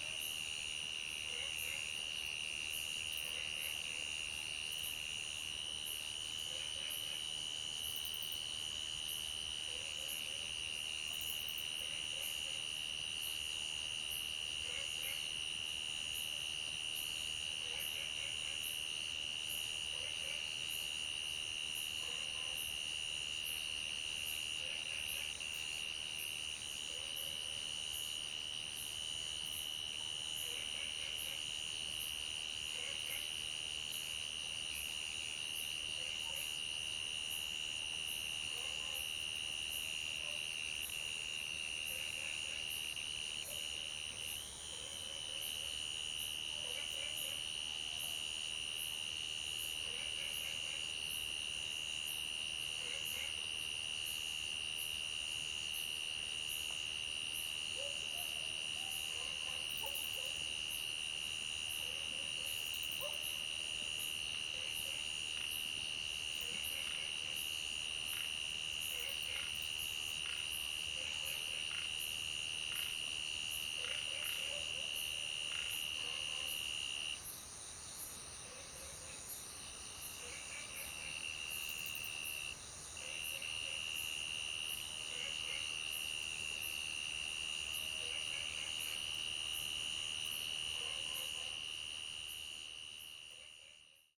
Zhonggua Rd., 桃米里, Taiwan - In the grass
In the grass, Frog Sound, Sound of insects, late at night
Zoom H2n MS+XY